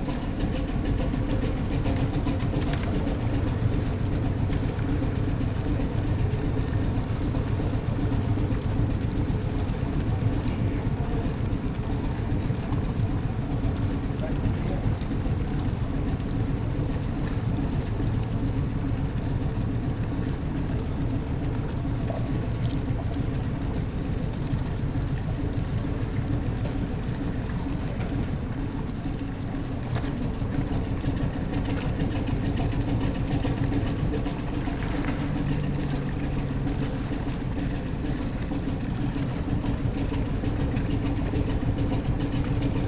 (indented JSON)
{
  "title": "Kantinestraat, Oostende, Belgien - Crangon in Ostend sea lock",
  "date": "2014-09-29 17:44:00",
  "description": "Museum trawler Crangon passing through the sea lock in Ostend on its way to the fishing harbour. The whining noise in the second half is the hydraulics of the lock's gate opening. Note the wonderful jazz of the Crangon's 3-cylinder ABC diesel engine. It don't mean a thing if it ain't got that swing... :-)\nZoom H4n, built-in microphones",
  "latitude": "51.23",
  "longitude": "2.93",
  "altitude": "4",
  "timezone": "Europe/Brussels"
}